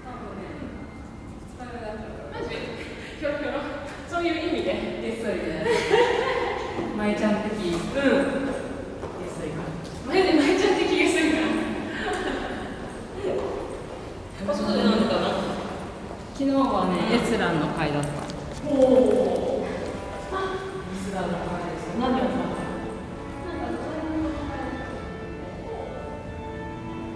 Music University corridor, Vienna
corridor at the Music University, Vienna